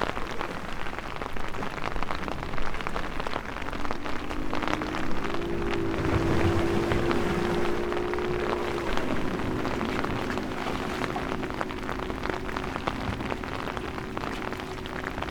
pier, Novigrad - rain, morning walk
walk with umbrella at the edge between see wall rocks and stony pier, rain
Novigrad, Croatia, 13 July, ~09:00